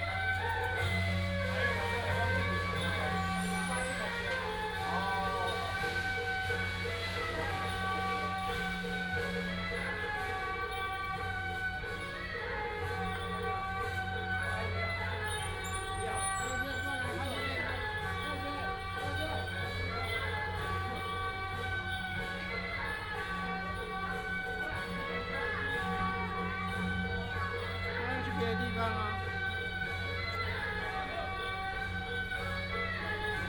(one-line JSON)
{"title": "迪化街一段307巷, Taipei City - temple festivals", "date": "2017-06-05 18:46:00", "description": "Traditional temple festivals, “Din Tao”ßLeader of the parade", "latitude": "25.06", "longitude": "121.51", "altitude": "10", "timezone": "Asia/Taipei"}